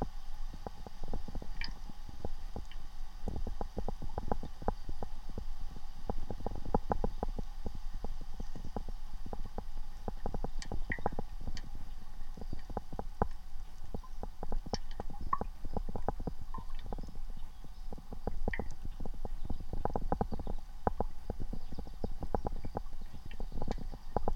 Taylor Creek Park, Toronto, ON, Canada - WLD 2020 Hydrophone recordings of creek
Though it sounds like two distinct mono recordings - which in one sense it is - both channels were recorded at the same time on the same device (Zoom H4n). Sounds were picked up using piezo-element contact microphones on the tops of beverage cans placed into the water. The left channel has a continuous sound from what seems to be a pebble on the creek-bed being rolled about by the current, whereas the right has only occasional sounds of water movement caused by the can. Both channels also pick up ambient sounds from the air such as red-winged blackbirds, dogs, and people.
Ontario, Canada, 10 July 2020